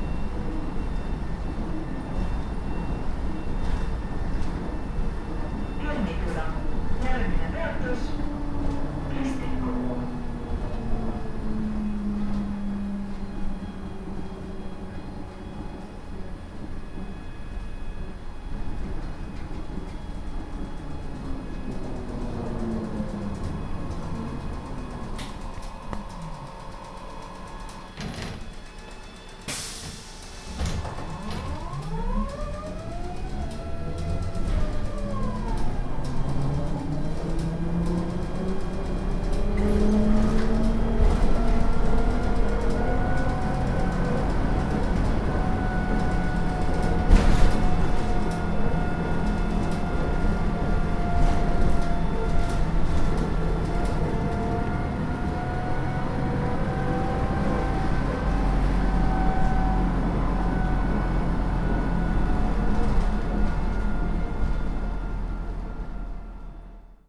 Tallinn, trolleybus stop at Balti jaam
Inside/outside sound - the sound inside of an empty trolleybus driving from Baltijaam (Tallinns main train station) to the next stop.
19 April 2011, 23:50